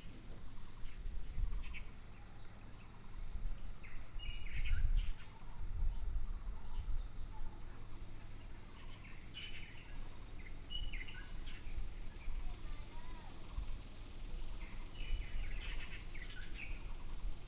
東源路, Mudan Township, Pingtung County - Beside the village street
Bird song, Beside the village street, traffic sound
Binaural recordings, Sony PCM D100+ Soundman OKM II
Mudan Township, Pingtung County, Taiwan